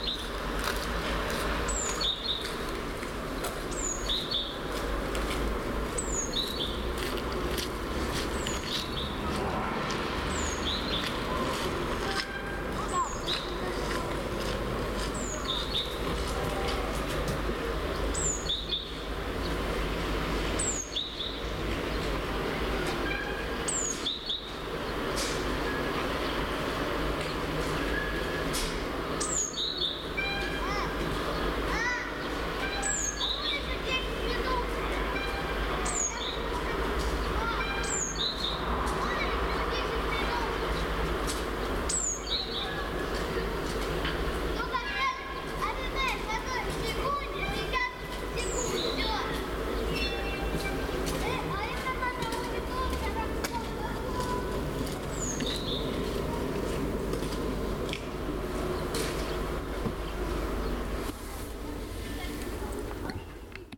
Шумы улицы. Голос взрослых и детей. Пение птиц и шаги по асфальту
9 March 2019, ~12:00